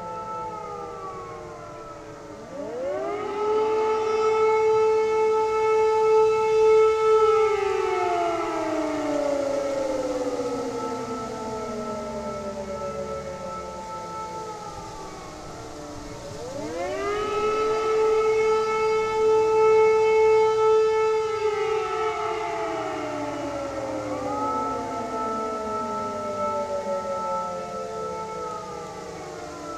Utena, Lithuania, warning sirens
The test of the public warning and information system.